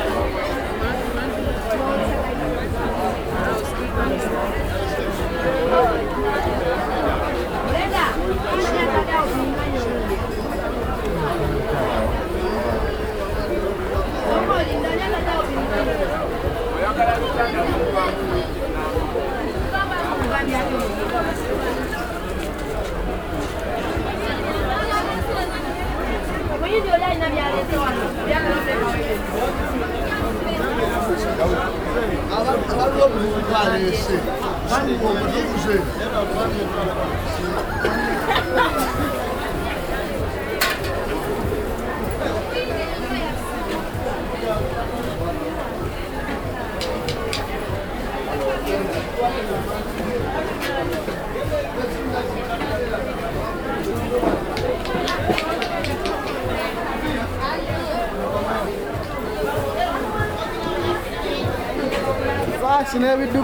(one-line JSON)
{"title": "Nakasero Market, Kampala, Uganda - Among the traders...", "date": "2010-07-10 07:05:00", "description": "An early morning stroll among the vegetable stalls of busy Nakasero Market… it’s hard to make a way through the crowd; many traders display their merchandise on plastic sheets on the floor, man carrying heavy crates and sacks are rushing and pushing their way through…", "latitude": "0.31", "longitude": "32.58", "altitude": "1181", "timezone": "Africa/Kampala"}